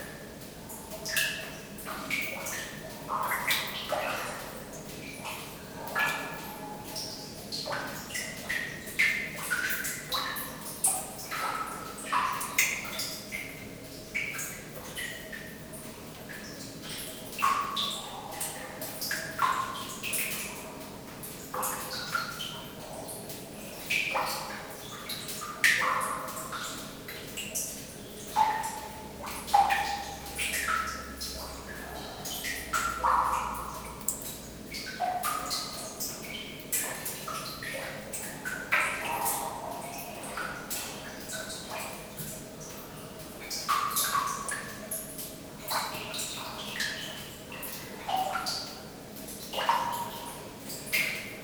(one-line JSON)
{"title": "Namur, Belgique - Underground mine", "date": "2018-12-25 13:00:00", "description": "Short soundscape of an underground mine. Rain into the tunnel and reverb.", "latitude": "50.48", "longitude": "4.97", "altitude": "160", "timezone": "Europe/Brussels"}